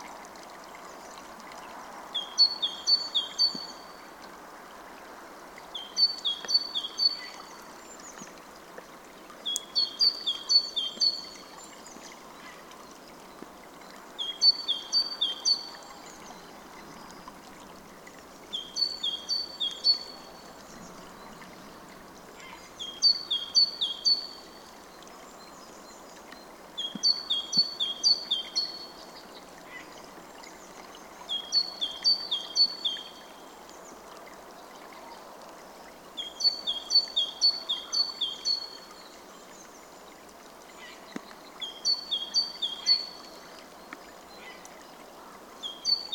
Siaudiniai, Lithuania, at river
some quitness at river